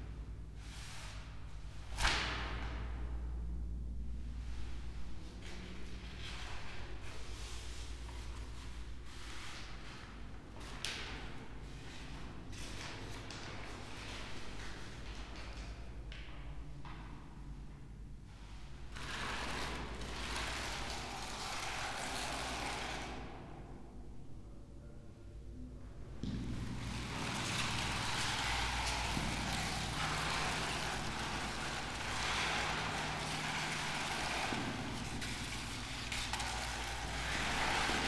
chamber cistern, wine cellar, Maribor - sound of a scroll book ”on nothing”
paper, steps, echo
Maribor, Slovenia, 21 October, 13:00